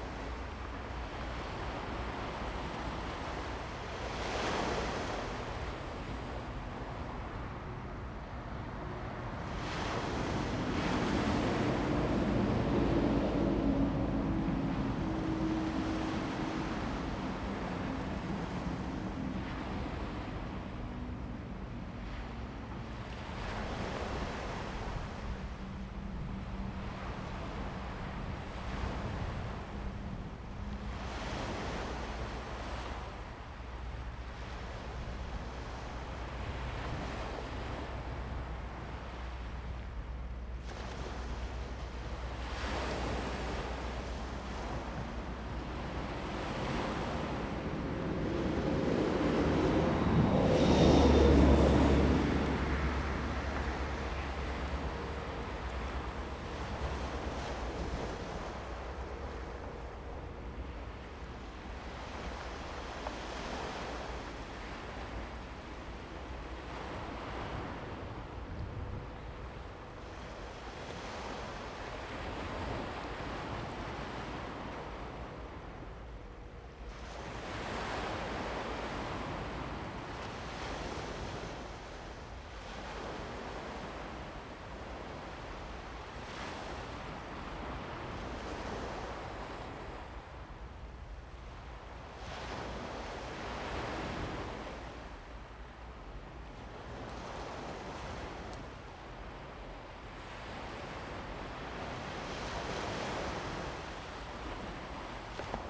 上楓港, 縱貫公路 Fangshan Township - Early morning at the seaside
On the coast, Sound of the waves, Traffic sound, Early morning at the seaside
Zoom H2n MS+XY